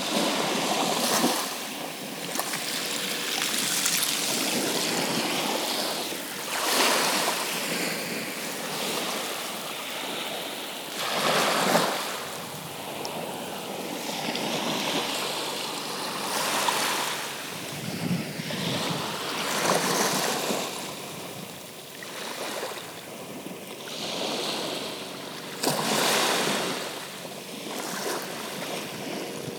Breskens, Nederlands - The sea
Sound of the sea on the Breskens beach, and a lot of plovers walking around me.
17 February 2019, 13:30